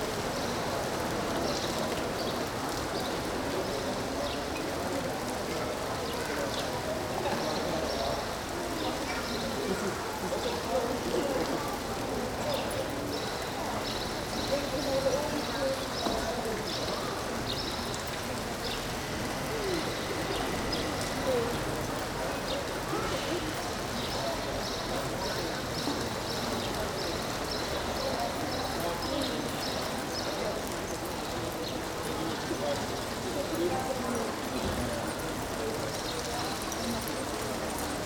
Mannheim, Deutschland - Statue de Wolfgang Heribert von Dalberg
Stadt, Menschen, Restaurants, Vögel, Wasser, Flugzeug, Urban
Baden-Württemberg, Deutschland, 4 June 2022, 15:30